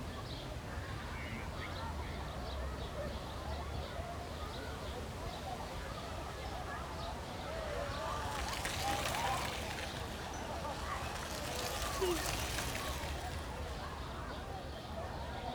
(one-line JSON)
{"title": "Westufer des Orankesees, Orankestrand, Berlin, Germany - Kids enjoying open air swimming heard from across the lake", "date": "2021-06-16 16:04:00", "description": "Such beautiful warm weather - 28C, sun and blue sky. Kids enjoying open air swimming pools is one of Berlin's definitive summer sounds. Regularly mentioned as a favourite. The loudspeaker announcements reverberate around the lake.", "latitude": "52.55", "longitude": "13.48", "altitude": "54", "timezone": "Europe/Berlin"}